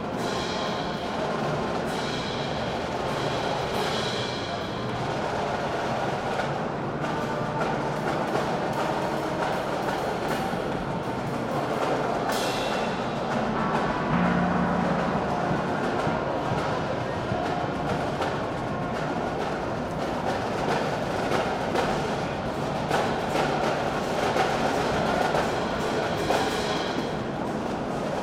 Westend-Süd, Frankfurt, Germany - musicmesse Hall 3.0
12 April 2013